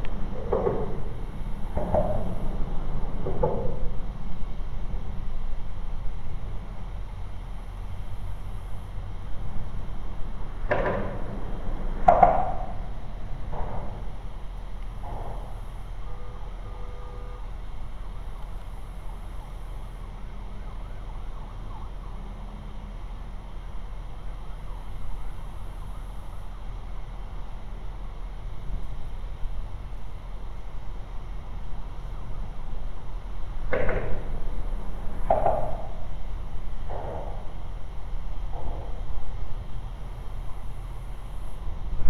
Liberty Rd, Houston, TX, USA - Underpass ping pong
Sounds of vehicles driving ove expansion joins on overpass above. Distant train noises can be heard from huge railyard.
Texas, United States, 20 September